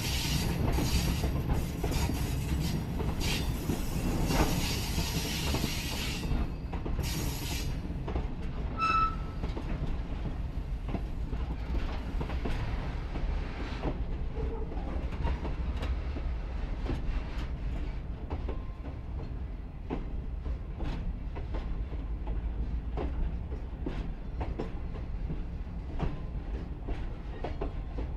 Train from Lamy to Santa Fe